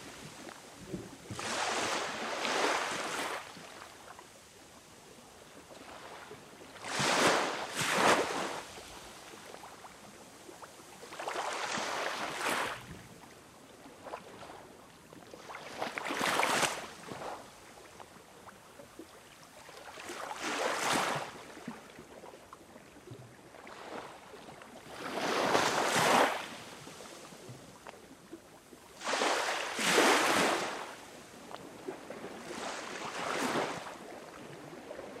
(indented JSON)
{
  "title": "Ulva Island, New Zealand - Post Office Bay",
  "date": "2010-11-14 20:00:00",
  "latitude": "-46.93",
  "longitude": "168.13",
  "altitude": "11",
  "timezone": "Pacific/Auckland"
}